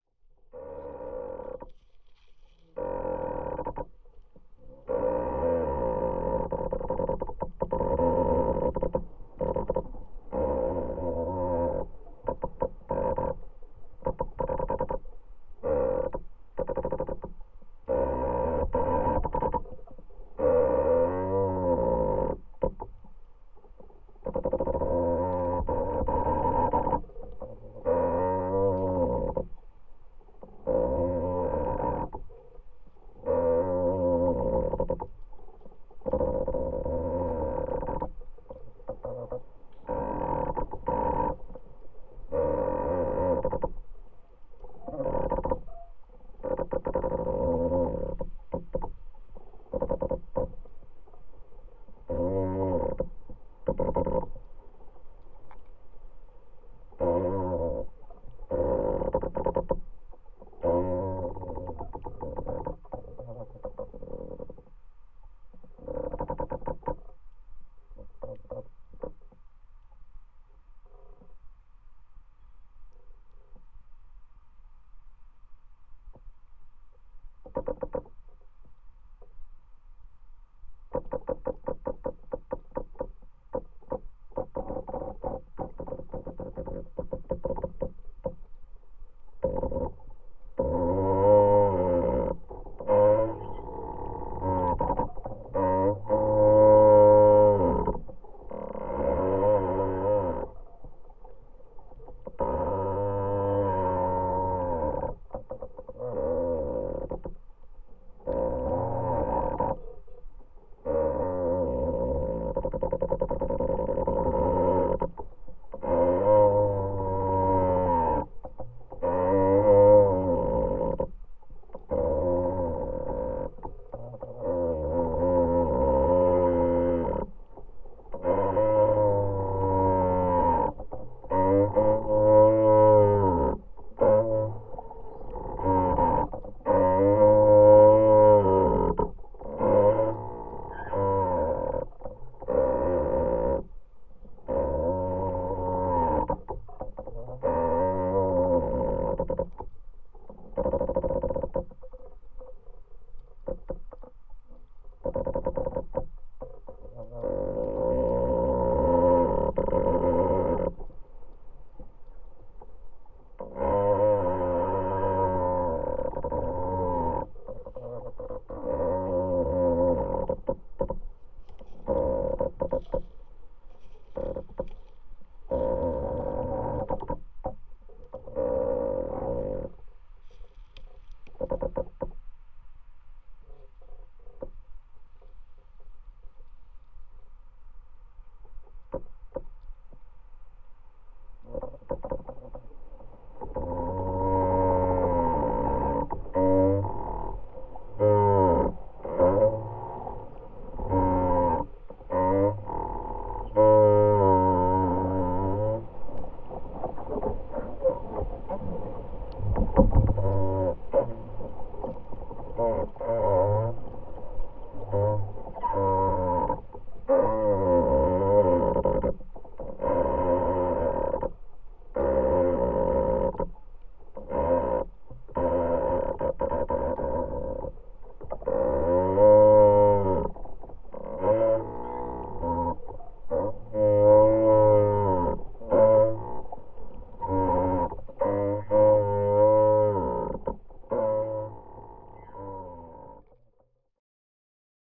another contact mic recording of moaning tree...my kid said it sounds like a bear
7 March 2019, 16:20